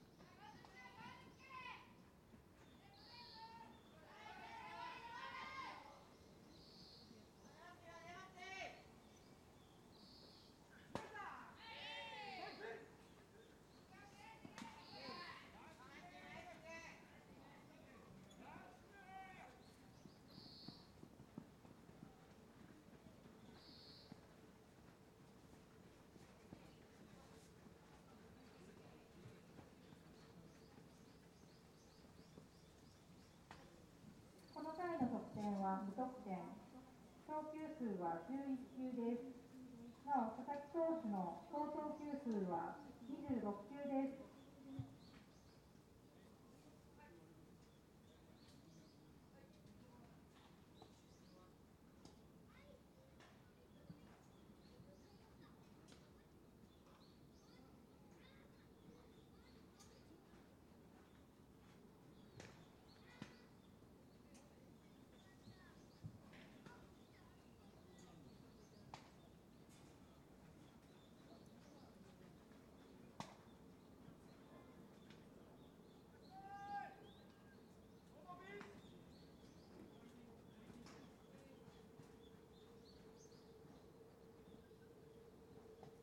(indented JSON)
{
  "title": "Anse, Wakamatsu Ward, Kitakyushu, Fukuoka, Japan - High School Baseball Practice",
  "date": "2022-05-15 13:30:00",
  "description": "Sunday baseball practice in the Wakamatsu industrial area.",
  "latitude": "33.92",
  "longitude": "130.81",
  "altitude": "2",
  "timezone": "Asia/Tokyo"
}